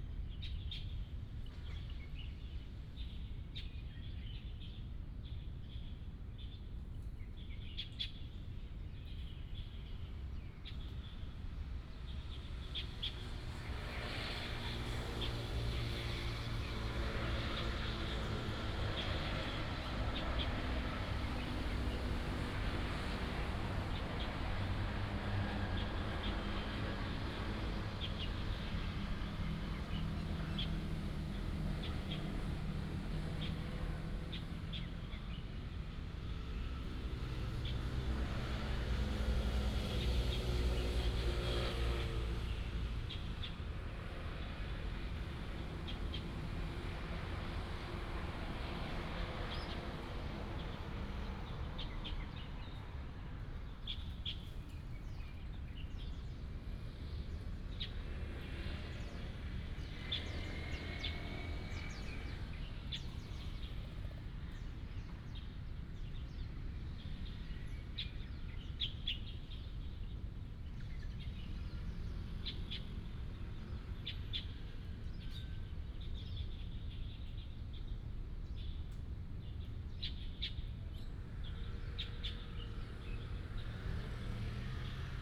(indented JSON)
{
  "title": "仁德公園, Zhongli Dist., Taoyuan City - in the Park",
  "date": "2017-11-29 08:20:00",
  "description": "in the Park, Birds sound, Traffic sound, Binaural recordings, Sony PCM D100+ Soundman OKM II",
  "latitude": "24.95",
  "longitude": "121.27",
  "altitude": "139",
  "timezone": "Asia/Taipei"
}